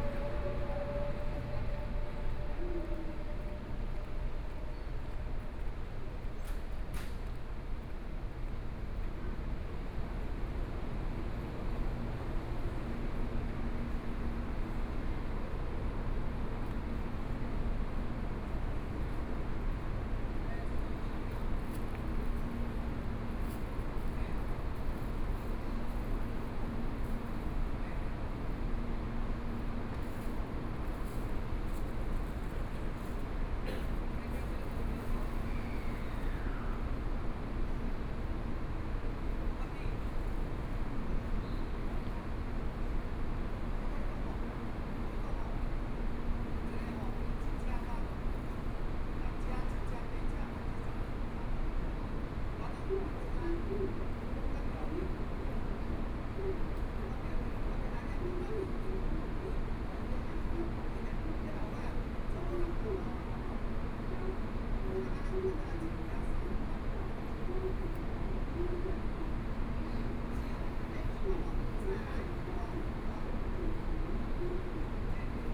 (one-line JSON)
{"title": "Taipei Main Station, Taiwan - On the platform", "date": "2013-10-08 07:03:00", "description": "Toward the platform, Train passes, Train arrived\nStation broadcast messages, Zoom H4n+ Soundman OKM II", "latitude": "25.05", "longitude": "121.52", "altitude": "19", "timezone": "Asia/Taipei"}